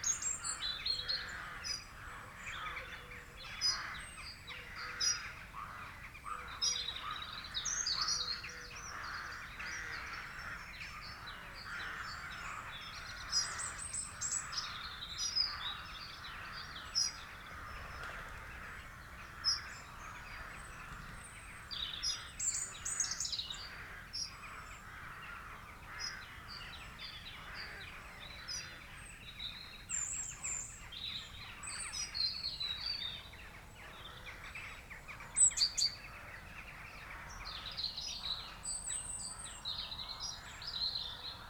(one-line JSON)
{"title": "The Vicarage, Helperthorpe, Malton, UK - Dawn chorus in February ...", "date": "2018-02-04 07:00:00", "description": "Dawn chorus in February ... open lavalier mics on T bar strapped to bank stick ... bird song and calls from ... crow ... rook ... jackdaw ... pheasant ... robin ... tree sparrow ... background noise from traffic etc ...", "latitude": "54.12", "longitude": "-0.54", "altitude": "85", "timezone": "Europe/London"}